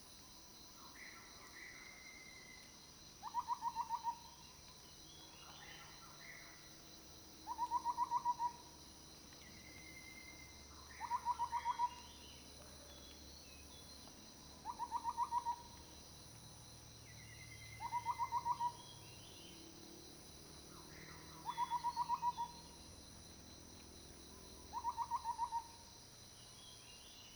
early morning, Faced with bamboo, Birdsong
Zoom H2n Spatial audio
Puli Township, 水上巷, 14 July 2016, 05:04